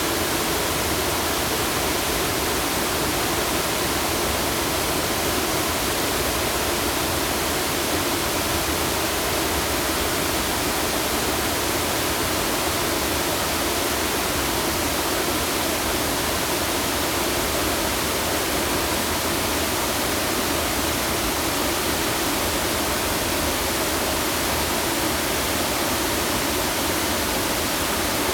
waterfall
Zoom H2n MS+ XY+Spatial audio